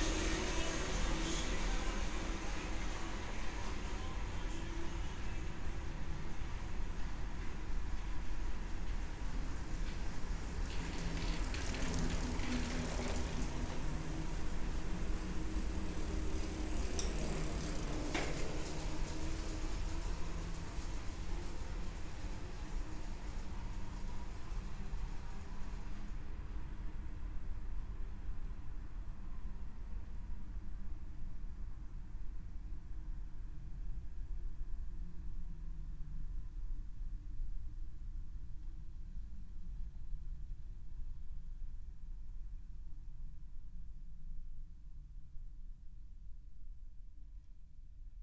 용담 터널 Yongdam Bicycle Tunnel
Somewhat sonically isolated from the surrounding combustion engine soundscape of Seoul, this 300(?) meter tunnel is a dedicated and well used cycle-way. As cyclist enter and exit from either end so do sounds emerge from relative silence. The sonic behaviour is odd and gives the place it's own particular characteristic. All sound sources are in continuous motion.
Gyeonggi-do, South Korea, 1 April 2018